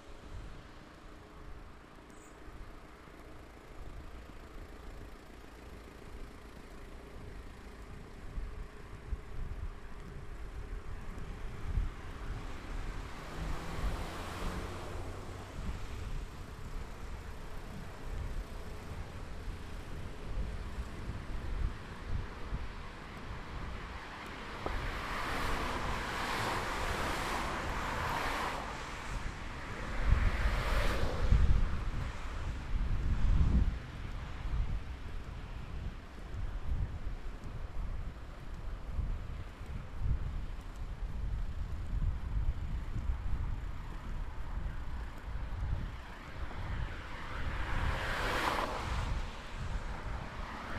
Birds vs. morning traffic
Spring is coming! Going to the tram every morning I noticed that a) it is already becoming bright at 7:30 b) that the birds are singing in the morning and evening. In the morning though, they have to try hard to predominate the noise of traffic.